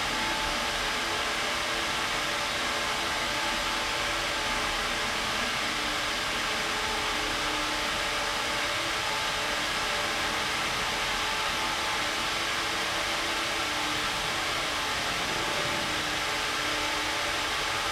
I've walked past these pipes dozens of times and have enjoyed their singing, with the addition of the odd train and sounds from the birds and people on the canal itself. Sony M10 Rode VideoMicProX.